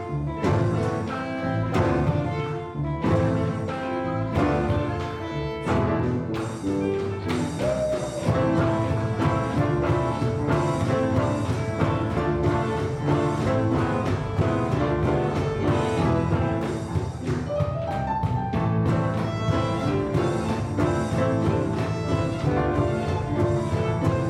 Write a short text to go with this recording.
Music in the upper room. Tech Note : Sony PCM-M10 internal microphones.